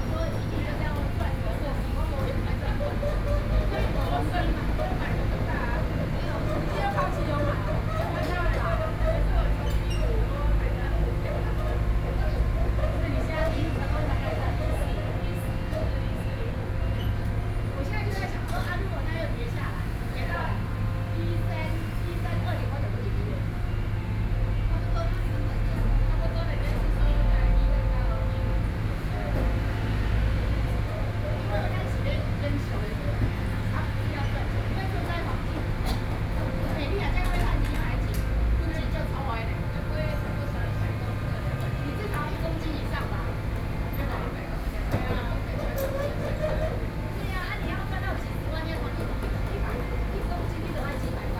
Peace Memorial Park, Taipei - 228 Peace Memorial Park
A group of women chatting, The next construction machinery, Sony PCM D50 + Soundman OKM II